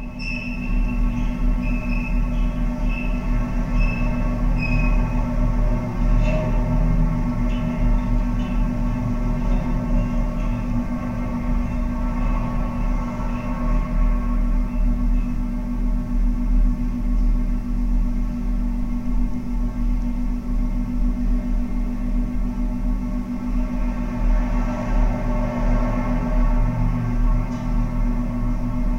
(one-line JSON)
{"title": "Al Quoz - Dubai - United Arab Emirates - Traffic Through Metal Entrance Gates", "date": "2016-01-16 14:38:00", "description": "Traffic recorded through the metal gates at the entrance of the complex known as the \"Court Yard\". Recorded using a Zoom H4 and Cold Gold contact microphones. \"Tracing The Chora\" was a sound walk around the industrial zone of mid-Dubai.\nTracing The Chora", "latitude": "25.14", "longitude": "55.22", "altitude": "22", "timezone": "Asia/Dubai"}